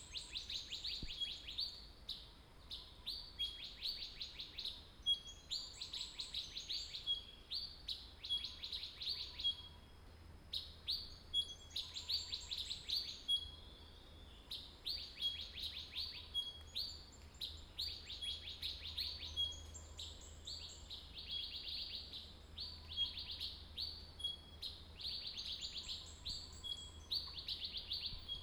Birds singing, Next to the woods